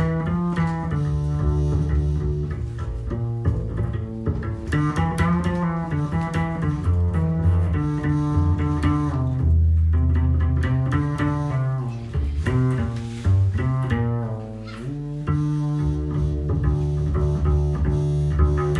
haus waldfrieden, alf - Alf, private concert, Haus Waldfrieden
excerpt from private concert at a vineyard above the river moselle, part of the concert series "bitte nicht fuettern" (i. e. do not feed) in private spaces. playing: dirk raulf, sax - hartmut kracht, bass - frank köllges, drums. recorded may 27, 2007.